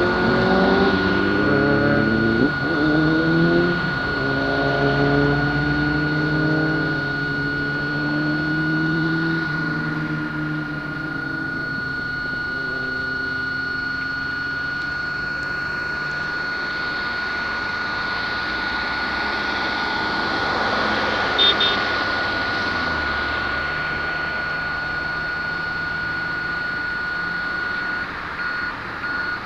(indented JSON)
{
  "title": "Taczaka, Szczecin, Poland",
  "date": "2010-11-21 16:30:00",
  "description": "At the pedestrian crossing.",
  "latitude": "53.43",
  "longitude": "14.50",
  "altitude": "22",
  "timezone": "Europe/Warsaw"
}